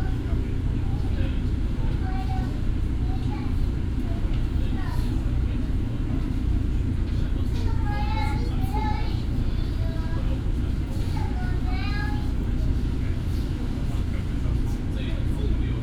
Taoyuan Airport MRT, Zhongli Dist., Taoyuan City - In the MRT compartment
In the MRT compartment, broadcast message sound, Child, Binaural recordings, Sony PCM D100+ Soundman OKM II
Zhongli District, Taoyuan City, Taiwan, 2 December 2017, 18:56